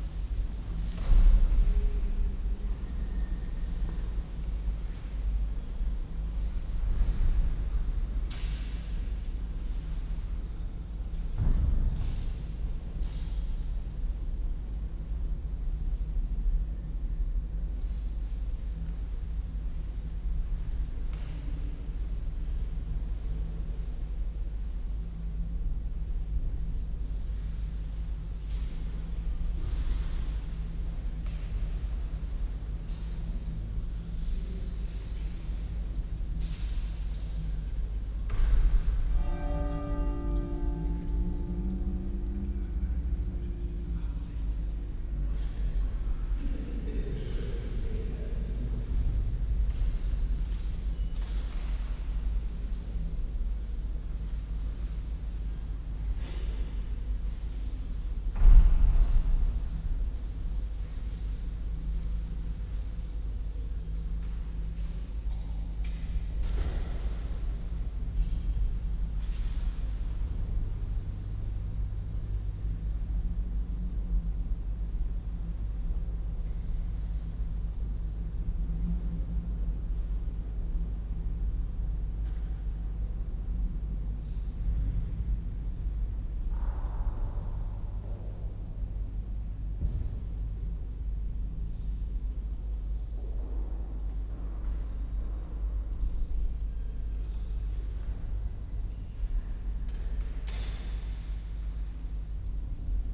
St.-Nikolai-Kirche, Alter Markt, Kiel, Deutschland - Quiet inside St. Nikolai church, Kiel, Germany
Binaural recording, Zoom F4 recorder with OKM II Klassik microphone and A3-XLR adapter.
2 October 2017